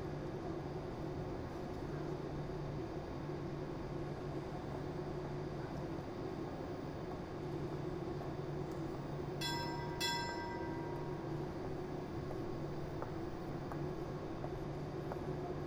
FGC Catalunya Station
Train station; short distance service. Lunchtime on a Saturday.
Barcelona, 22 January 2011, 14:30